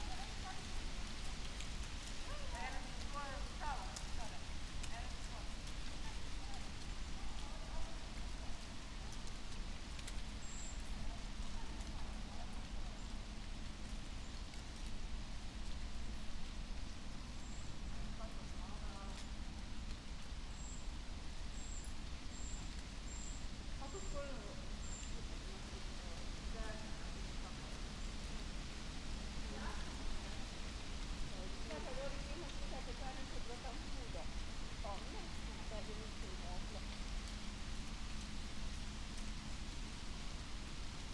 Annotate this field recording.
dry leaves flying and slowly descending on soft autumn carpet, wind, passers-by walking above